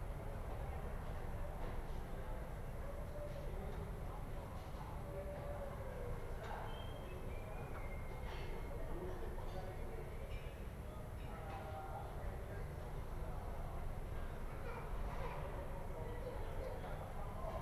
Ascolto il tuo cuore, città, I listen to your heart, city. Several chapters **SCROLL DOWN FOR ALL RECORDINGS** - Round one pm with the sun but not much dog in the time of COVID19 Soundscape
"Round one pm with the sun but not much dog in the time of COVID19" Soundscape
Chapter XXXIX of Ascolto il tuo cuore, città. I listen to your heart, city
Friday April 10th 2020. Fixed position on an internal terrace at San Salvario district Turin, Thirty one days after emergency disposition due to the epidemic of COVID19.
Start at 1:15 p.m. end at 2:15 p.m. duration of recording 1h 00’00”.
Piemonte, Italia, April 2020